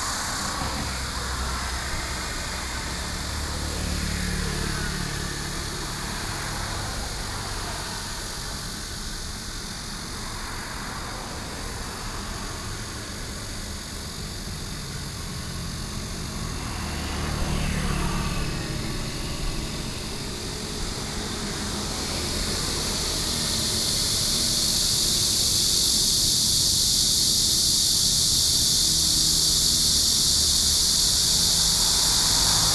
Sec., Jiayuan Rd., Shulin Dist., New Taipei City - Cicada and traffic sounds
Cicada sounds, Traffic Sound
Binaural recordings, Sony PCM D50+Soundman okm
New Taipei City, Taiwan